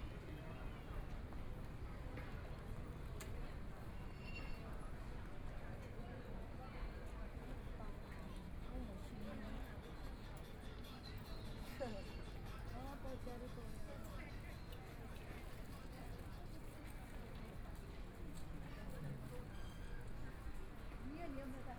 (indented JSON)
{
  "title": "Siping St., Taipei City - soundwalk",
  "date": "2014-02-17 16:40:00",
  "description": "Walking on the street, Traffic Sound, Through different shops and homes, Walking in the direction of the East\nPlease turn up the volume\nBinaural recordings, Zoom H4n+ Soundman OKM II",
  "latitude": "25.05",
  "longitude": "121.53",
  "timezone": "Asia/Taipei"
}